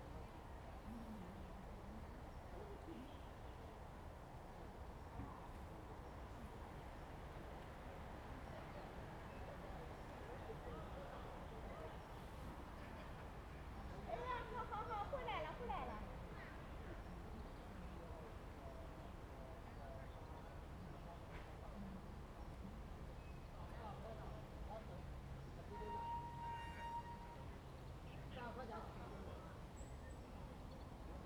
太武山公園, Kinmen County - in the Park
In Square Park, Tourists, Birds singing, Wind, Forest
Zoom H2n MS+XY